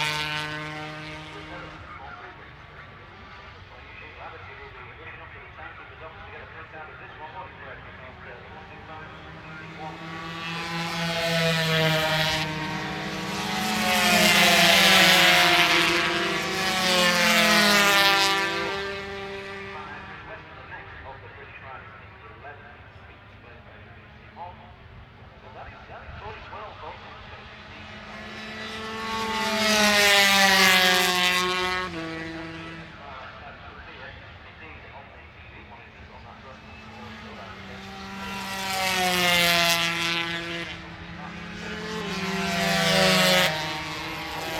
British Motorcycle Grand Prix 2004 ... 125 warm up ... one point stereo mic to minidisk ...